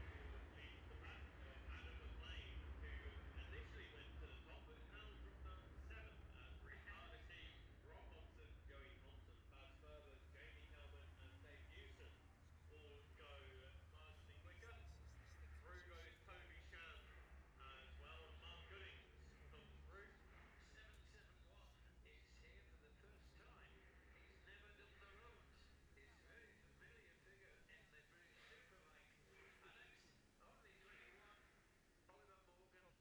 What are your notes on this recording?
the steve henshaw gold cup 2022 ... twins practice ... dpa 4060s clipped to bag to zoom h5 ...